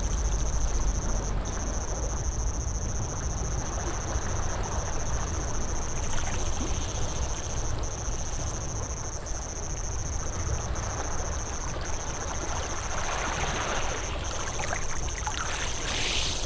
Bd Stephanopoli de Comene, Ajaccio, France - les Sanguinaires Ajaccio
wave and water sound
Captation ZOOM H6